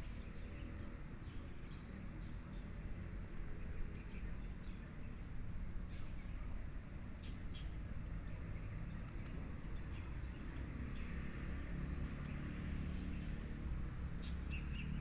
南濱公園, Hualien City - in the Park
Birdsong, Morning at Waterfront Park, The weather is very hot, Children and the elderly
Binaural recordings
Hualien City, Hualien County, Taiwan, 29 August 2014